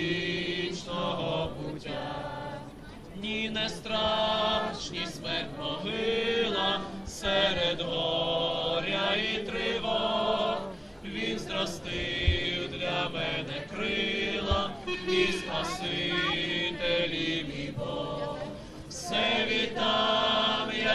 l'viv, prospekt svobody - sunday choir gathering
Lviv Oblast, Ukraine, 30 August 2009, ~17:00